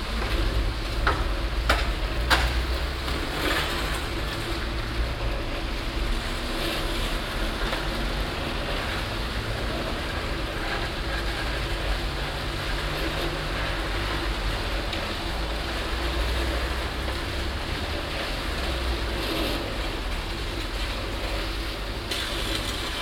einschippen von sand in schubkarre, strassenverkehr
soundmap nrw:
projekt :resonanzen - social ambiences/ listen to the people - in & outdoor nearfield recordings
gladbacherstrasse